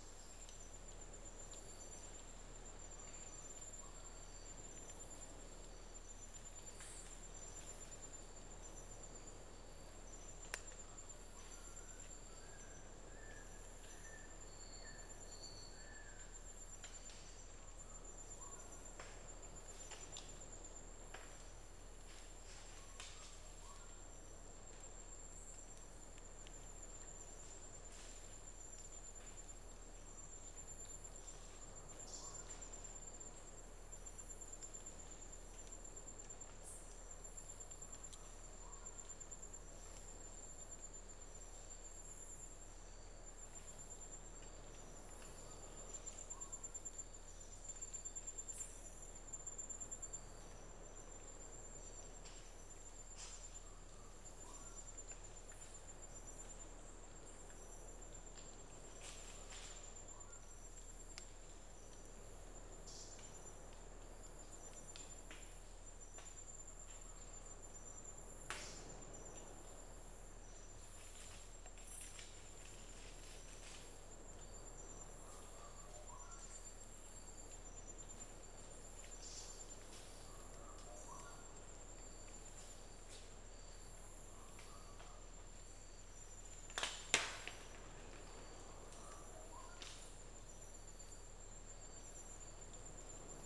Daintree National Park, QLD, Australia - evening at the bottom of mount sorrow
recorded just as night was beginning. this was very close to the infamous bloomfield track and occasionally you can hear cars driving against the dirt road. walking along this road was very unpleasant as you would very quickly become covered in dust, and the leaves of the trees in the surrounding rainforest were also covered.
recorded with an AT BP4025 into an Olympus LS-100.
Cape Tribulation QLD, Australia, January 2014